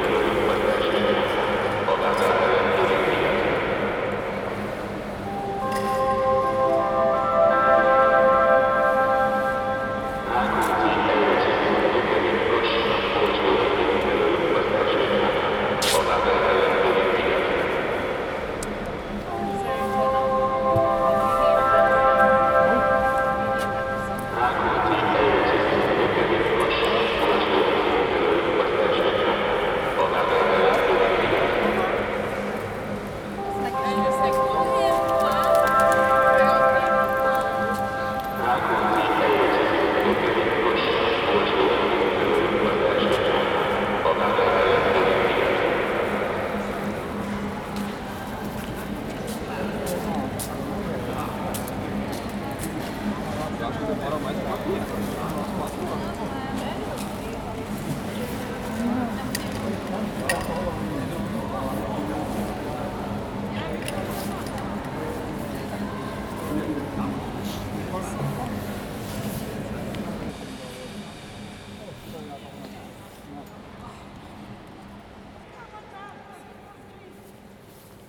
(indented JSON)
{
  "title": "Budapest, Kerepesi út, Hungary - Keleti Railway Station - Interior Acoustics Pt. 02",
  "date": "2013-11-21 22:20:00",
  "description": "A soundwalk inside the Keleti Railway Station highlighting the extraordinary architectural acoustics of this massive structure. This recordings were originally taken while waiting for the Budapest --> Belgrade night connection. Recorded using Zoom H2n field recorder using the Mid-Side microhone formation.",
  "latitude": "47.50",
  "longitude": "19.08",
  "altitude": "109",
  "timezone": "Europe/Budapest"
}